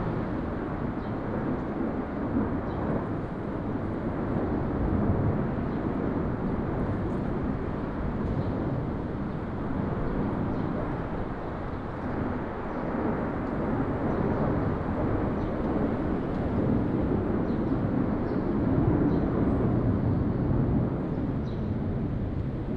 Ln., Changhe St., North Dist., Hsinchu City - In the alley
In the alley, wind and Leaves, Bird, The distance fighter flew through, Binaural recordings, Sony PCM D100+ Soundman OKM II
Hsinchu City, Taiwan